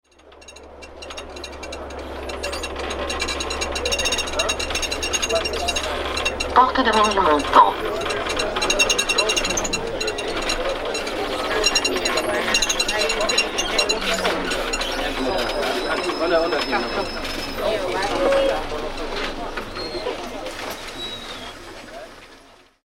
RobotAtWork Porte de Ménilmontant RadioFreeRobot
Paris, France